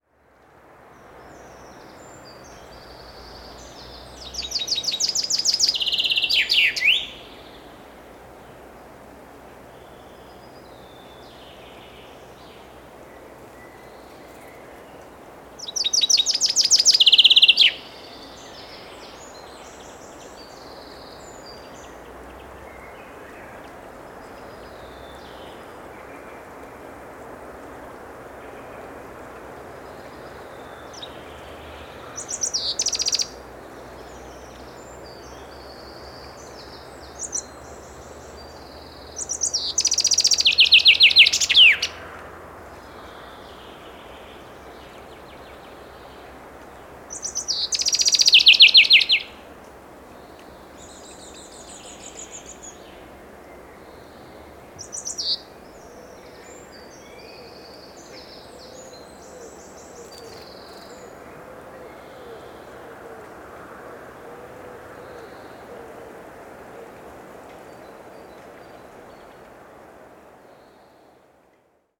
Am Freibad, Bad Berka, Deutschland - Under Woodland
*Recording in AB Stereophony.
Prominent bird vocalization, scattered bird calls and distant drones of cars.
Recording and monitoring gear: Zoom F4 Field Recorder, RODE M5 MP, Beyerdynamic DT 770 PRO/ DT 1990 PRO.